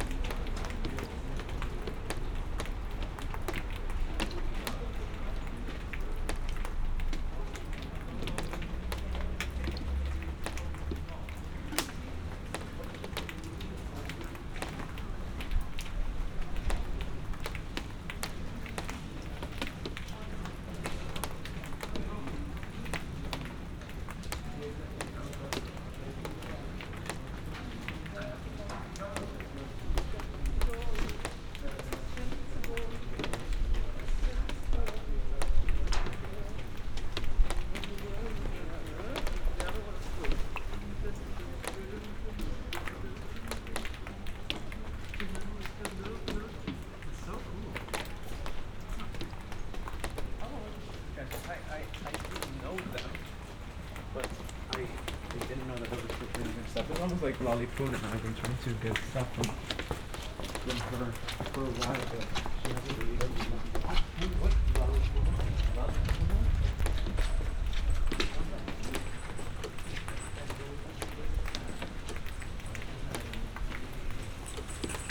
berlin, friedelstraße: vor indischem restaurant - the city, the country & me: in front of an indian restaurant
under a scaffold in front of an indian restaurant, rainwater dripping down
the city, the country & me: june 1, 2012
99 facets of rain
1 June, 00:45